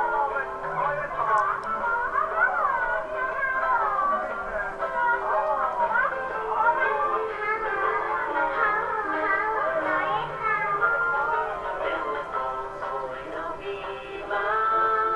Trenčín-Kubrica, Slovenská republika - Scary Tale
Haunted by an aching fairy-tale broadcasted through the one street of the allegedly quaint hamlet, I find shelter at the local drinking den. Occasional villagers stopping by appear to confirm the premise’s role as a haven, where an ostensibly permanent special offer of Borovička for 40 cents is promising relief.
Trencin-Kubrica, Slovakia, 7 December, 15:38